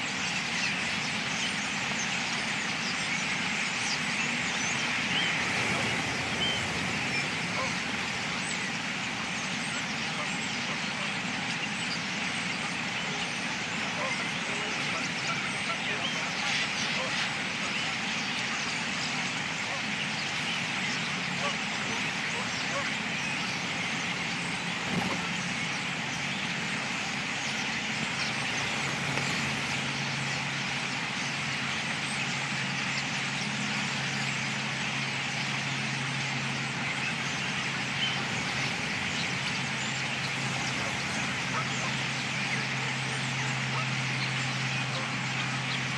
Otmoor RSPB reserve, Oxford, UK - Starlings coming in to roost after murmuration
An estimated 50,000 birds roosted in the reed beds during the winter months, and large numbers still do. I put the recorder in a Hawthorn bush and retreated to some cover to watch the birds coming in to roost in the reed beds. Greylag geese flew over at various points and Mallard and other wildfowl can be heard, along with a couple af light aircraft of course....Sony M10 with built in mics.